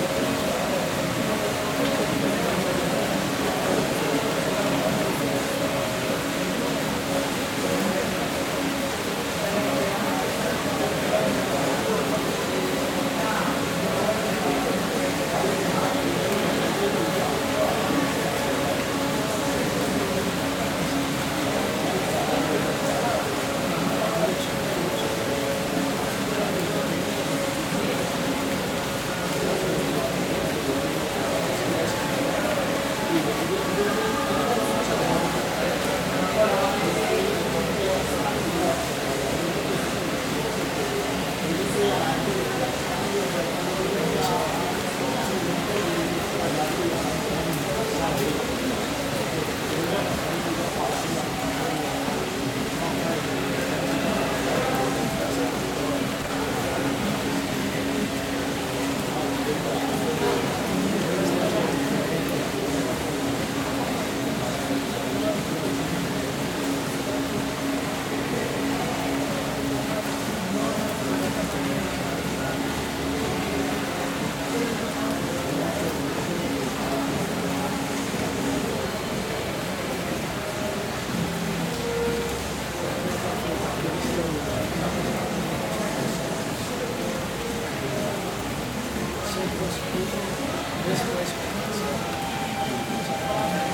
Una tarde en el bloque de artes de la universidad de Antioquia mientras llueve y todos los estudiantes conversan pasando el tiempo